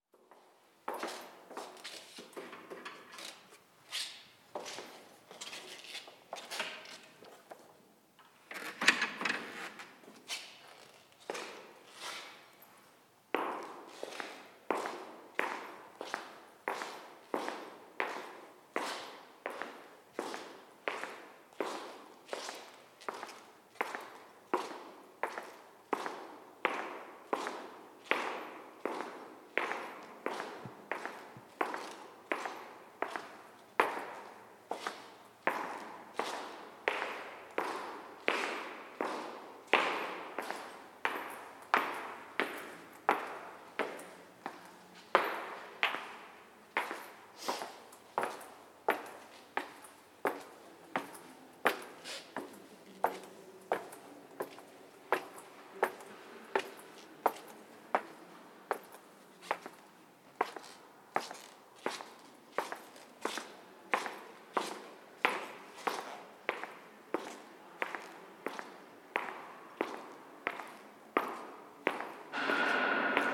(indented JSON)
{
  "title": "Domplatz, Salzburg, Österreich - empty dome",
  "date": "2020-04-23 13:05:00",
  "description": "walk into the empty dome salzburg to ligth a candle",
  "latitude": "47.80",
  "longitude": "13.05",
  "altitude": "433",
  "timezone": "Europe/Vienna"
}